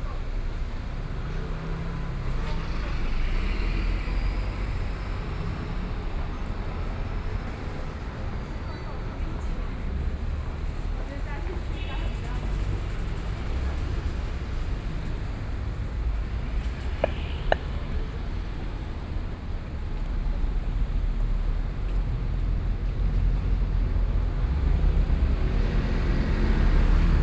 中山區桓安里, Taipei City - Walking across the different streets
Walking across the different streets, Traffic Sound, Walking towards the Park
Please turn up the volume a little
Binaural recordings, Sony PCM D100 + Soundman OKM II